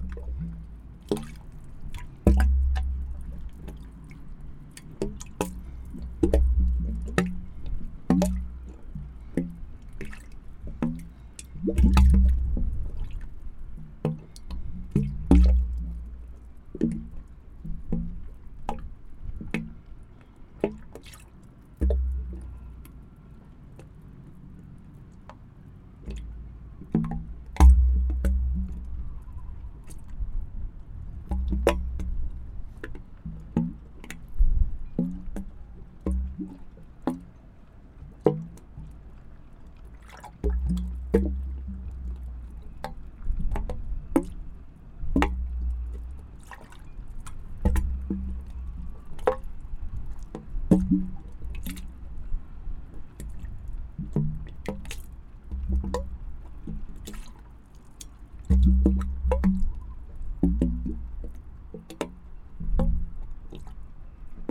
Kulgade, Struer, Danmark - Sounds from a drainage pipe, Struer Harbor
Recorded with rode NT-SF1 Ambisonic Microphone, close up. Øivind Weingaarde
29 September 2022, 11:30